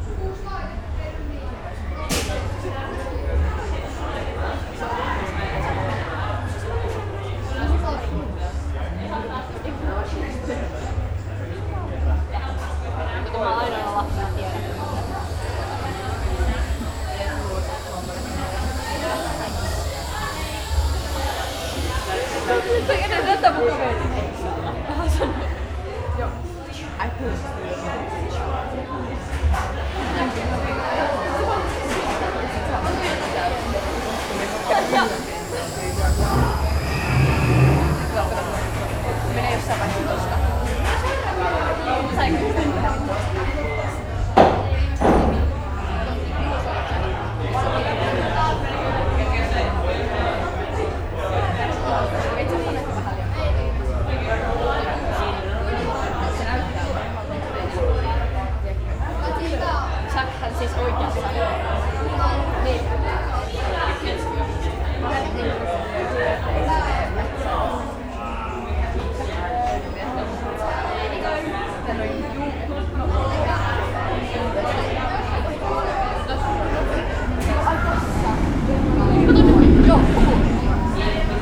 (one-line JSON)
{"title": "Taiga, Linnanmäki, Helsinki, Finland - Queue for Taiga -roller coaster", "date": "2020-07-21 12:05:00", "description": "Sounds from the queue of launched roller coaster 'Taiga' in Linnanmäki amusement park, Helsinki. Zoom H5, default X/Y module.", "latitude": "60.19", "longitude": "24.94", "altitude": "40", "timezone": "Europe/Helsinki"}